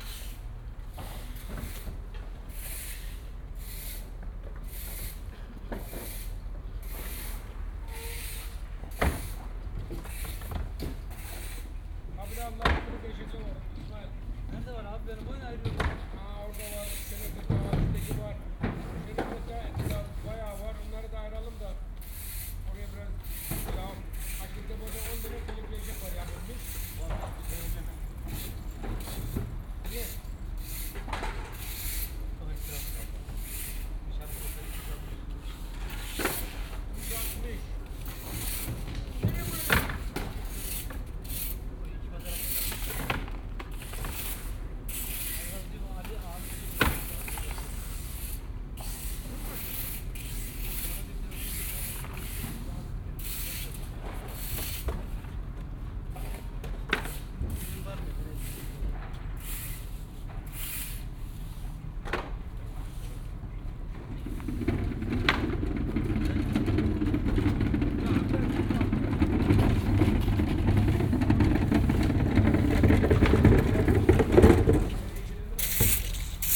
09.09.2008 20:15
fischstand weg, arbeiter kehren die strasse
fish stand gone, workers cleaning the street
berlin, 9 September 2008